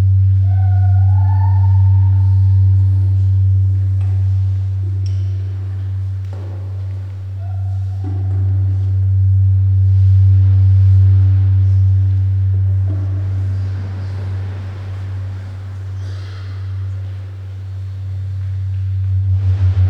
Cesena FC, Italia - sound demapping
grafic EQ and feedback in bad acoustic situation